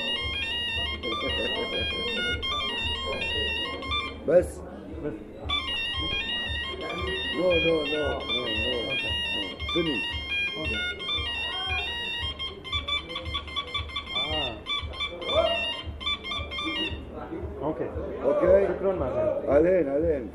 :jaramanah: :mazins sound gimmick: - twentysix